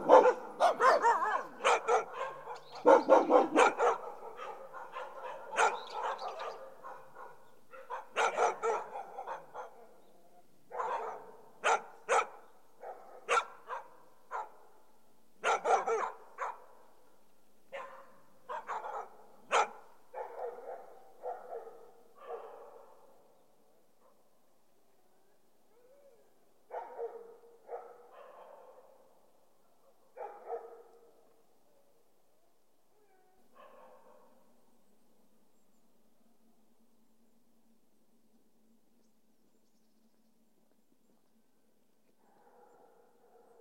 along a rural dirt road groups of dogs mark the entrance to each farm house, and bark vigorously at any wanderer walking the snow covered track to the small temple at the base of the hill. Though they make such noise, when I approached some of the dogs they were keen for some affection. Sony PCM-10.